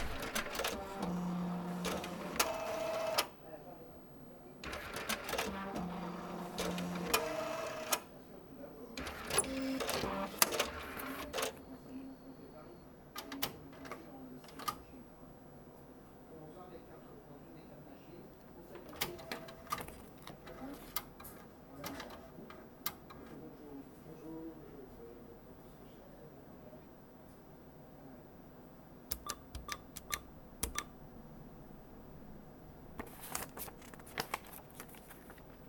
Paying Anders, in Norway, in aim to buy a binaural microphone ;-) In first, you can hear my bank extracts. People are entering and doing the same near me. After, you can here me doing the payment. This sound is very common here in Belgium because banks are clearly unfriendly and we have to do everything by ourselves.
Court-St.-Étienne, Belgium, 9 October 2015